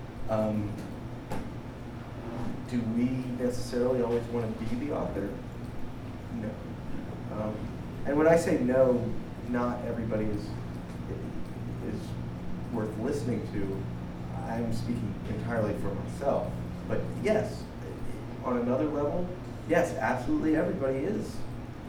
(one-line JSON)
{"title": "neoscenes: Neighborhood Public Radio talk", "date": "2007-05-01 21:52:00", "latitude": "37.34", "longitude": "-121.88", "altitude": "25", "timezone": "US/Pacific"}